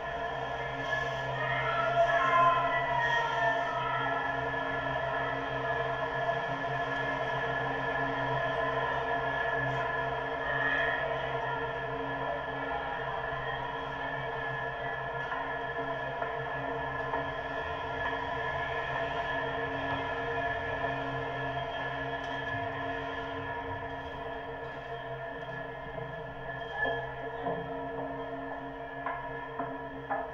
Kottbusser Tor, Kreuzberg, Berlin - railing, metal structures, contact